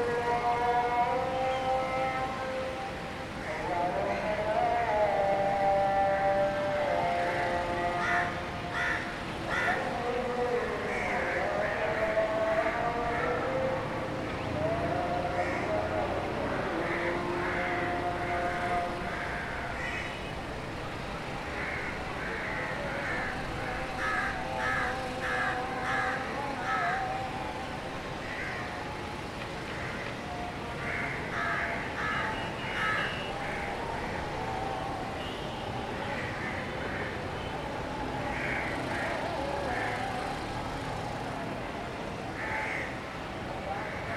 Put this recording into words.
Evening Azhan and Birds on the roof of a guest house in Bath Island, Karachi. Recorded using a Zoom H4N